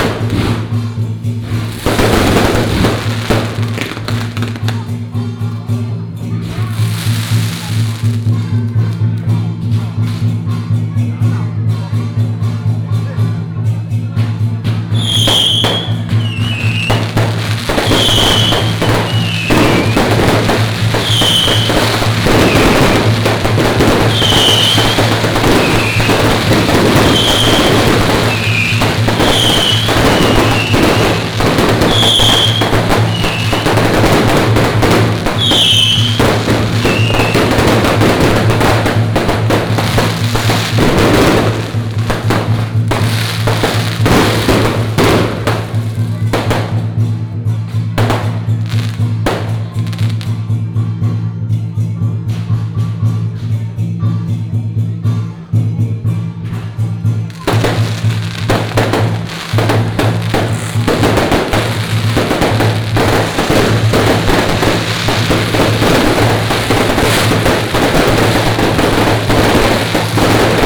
Hami St., Datong Dist., Taipei City - Firecrackers and fireworks
temple fair, Firecrackers and fireworks sound
Taipei City, Taiwan